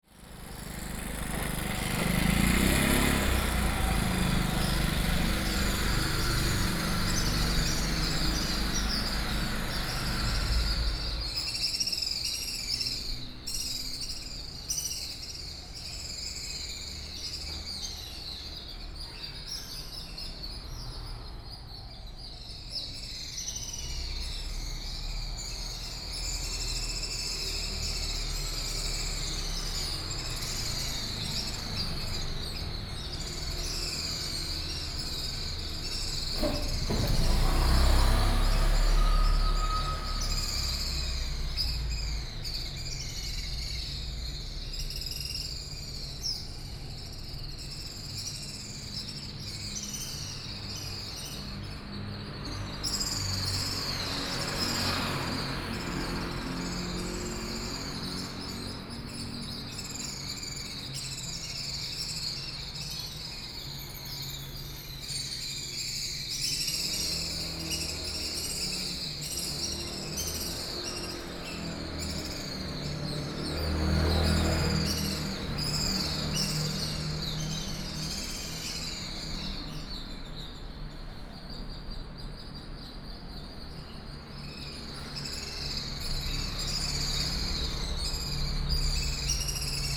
土地銀行台南分行, West Central Dist., Tainan City - Swallow
Early morning street, Swallow, Bird sound, Traffic sound